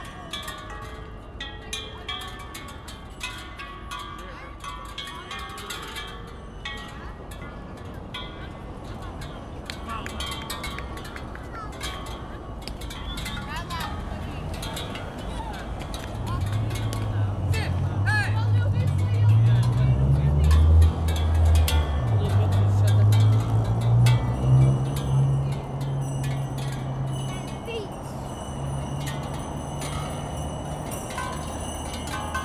Santo Ildefonso, Portugal - Texturas Sonoras, Avenida dos Aliados
Sounds of the installation "Texturas Sonoras" by Isabel Barbas in Avenida dos Aliados, Porto.
Zoom H4n
Carlo Patrão